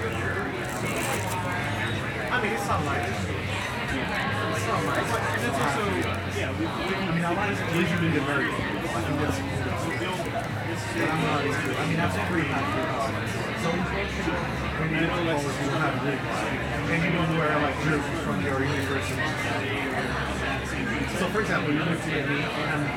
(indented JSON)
{
  "title": "E Liberty St, Ann Arbor, MI, USA - Avalon, 11:30 am Saturday morning",
  "date": "2018-03-31 11:30:00",
  "latitude": "42.28",
  "longitude": "-83.75",
  "altitude": "257",
  "timezone": "America/Detroit"
}